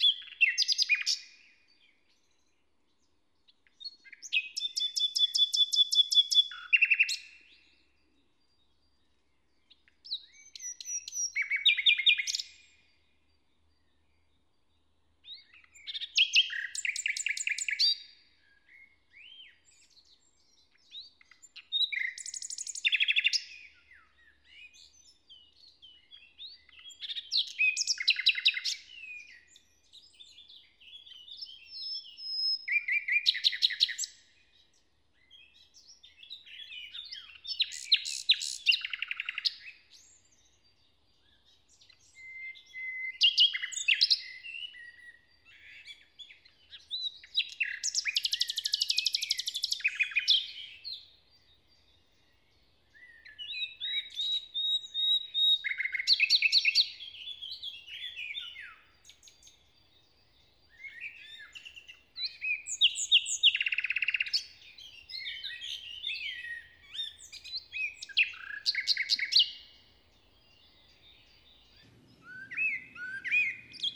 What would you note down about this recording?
Inside the forest. recorded early on a summer morning. After a wet night the sun comes up and sparkles through the leaves of the trees. A nightingale performs a kind of morning song. Hoscheid, Wald, Nachtigall, Im Wald, aufgenommen an einem frühen Sommermorgen. Nach einer nassen Nacht geht die Sonne auf und schimmert durch die Baumblätter. Eine Nachtigall singt ein Morgenlied. Hoscheid, forêt, rossignol, Enregistré dans la forêt, un matin d’été. Le soleil se lève après une nuit humide et scintille à travers les feuilles des arbres. Un rossignol nous joue une sorte de chanson du matin.